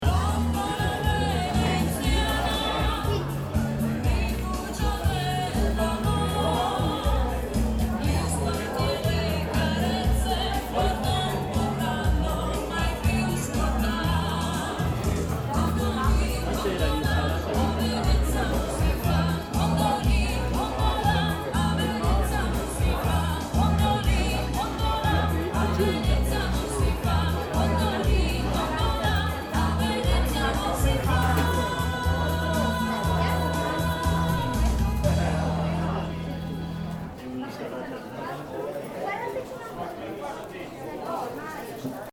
alto, fiesta sagra patata - alto, fiesta sagra patata 02
fiesta sagra patata - second recording - tape music
soundmap international: social ambiences/ listen to the people in & outdoor topographic field recordings